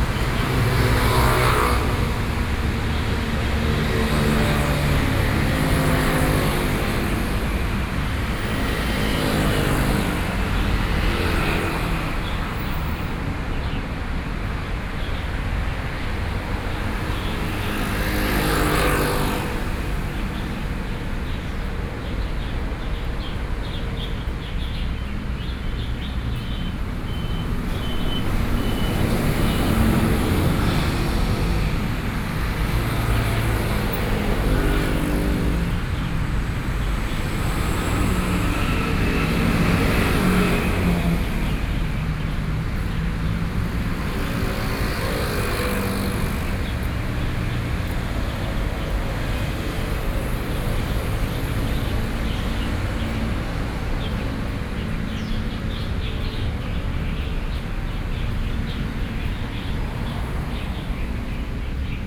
Roadside, Traffic sounds coming and going, Birds, (Sound and Taiwan -Taiwan SoundMap project/SoundMap20121129-11), Binaural recordings, Sony PCM D50 + Soundman OKM II